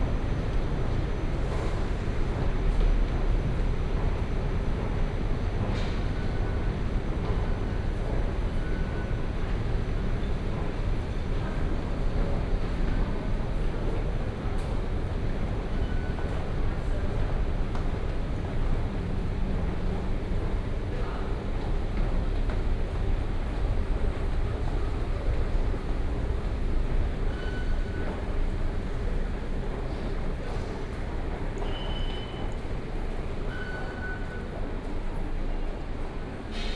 Xinyi Anhe Station, 大安區, Taipei City - walking into the MRT station
walking into the MRT station, Traffic Sound